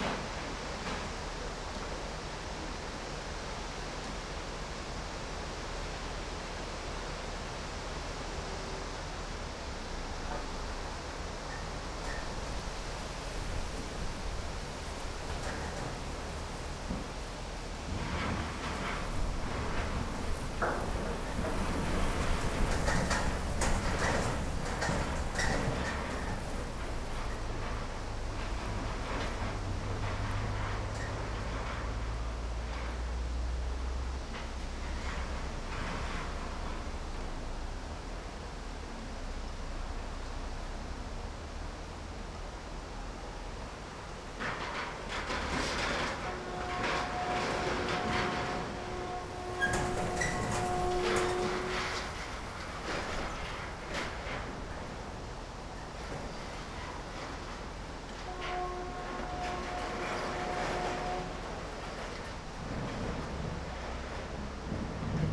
a delivery arrived at the scrapyard. recorded behind an aluminum fence that rattles in the wind. metal junk being moved towards one place and then lifted onto a scrap pile. dried bushes rustling. a busy railroad crossing to the left. (roland r-07)
24 October 2018, ~12:00